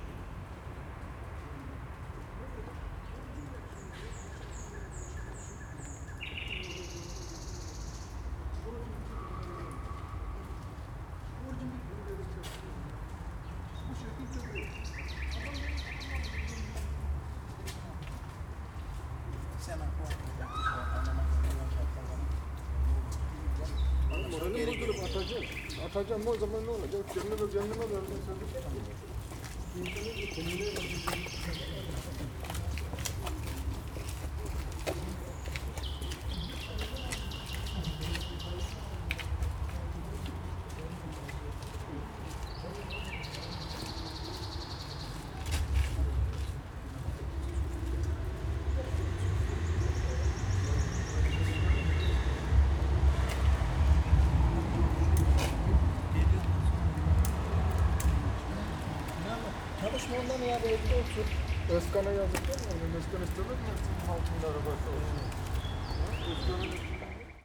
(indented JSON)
{
  "title": "Berlin, Dresdener Str. - night ambience",
  "date": "2011-05-15 01:40:00",
  "description": "saturday night ambience Berlin Kreuzberg, Dresdener Str., song of a nightingale from Luisengärten, former Berlin wall area.",
  "latitude": "52.50",
  "longitude": "13.41",
  "altitude": "36",
  "timezone": "Europe/Berlin"
}